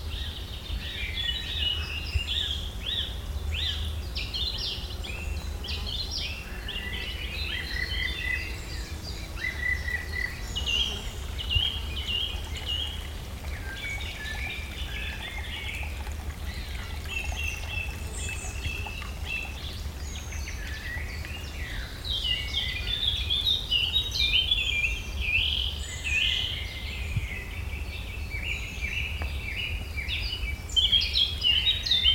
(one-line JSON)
{"title": "bei silkerode - im wald", "date": "2009-08-08 22:33:00", "description": "Produktion: Deutschlandradio Kultur/Norddeutscher Rundfunk 2009", "latitude": "51.57", "longitude": "10.38", "altitude": "239", "timezone": "Europe/Berlin"}